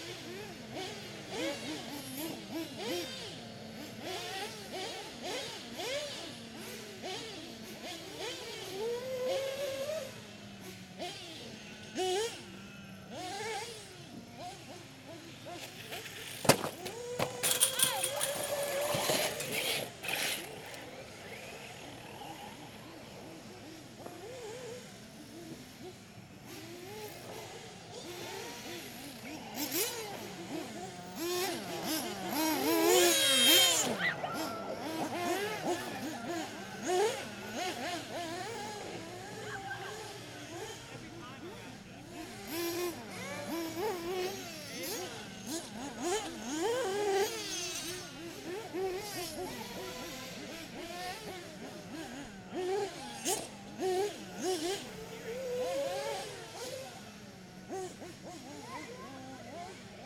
St. Louis Dirt Burners R/C Raceway, Kirkwood, Missouri, USA - R/C Dirt Track
Evening mayhem at St. Louis Dirt Burners R/C Raceway. Cars catching air on the jumps. Crashes - one into chain link fence. Shrieks.
Missouri, United States of America, 18 August 2020, 19:11